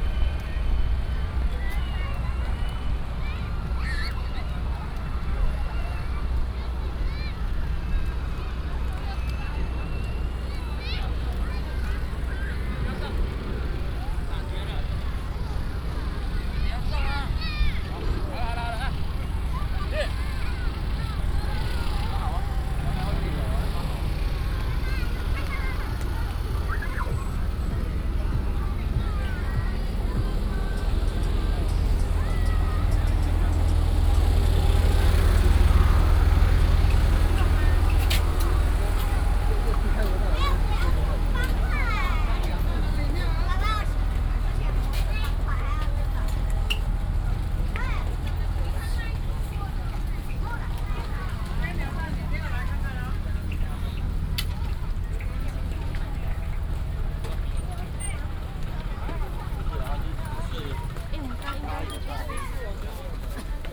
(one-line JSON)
{"title": "Zhuwei Fish Harbor, Dayuan District, Taoyuan City - Walking in the market", "date": "2016-11-20 15:04:00", "description": "Walking in the market, Many tourists, wind", "latitude": "25.12", "longitude": "121.24", "altitude": "7", "timezone": "Asia/Taipei"}